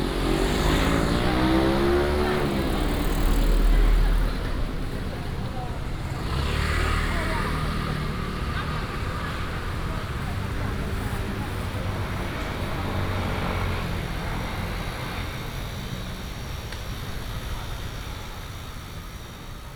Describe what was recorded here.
Traditional market, Walking in the traditional market area, Binaural recordings, Sony PCM D100+ Soundman OKM II